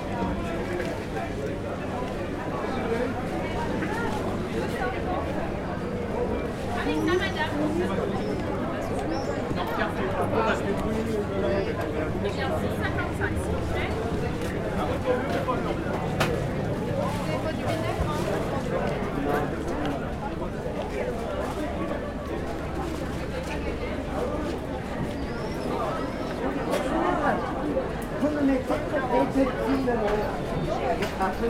Parcours dans la halle et à l'extérieur du marché très animé actuellement approche de la camionnette du rémouleur. ZoomH4npro à la main gauche.
France métropolitaine, France, July 2022